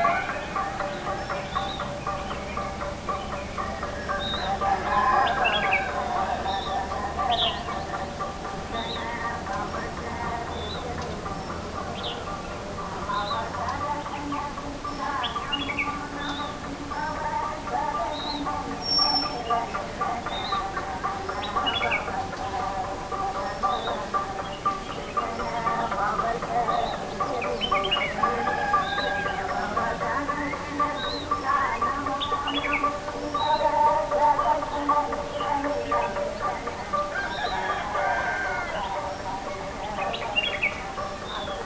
Nullatanni, Munnar, Kerala, India - dawn in Munnar - over the valley 2
dawn in Munnar - over the valley 2
6 November, ~06:00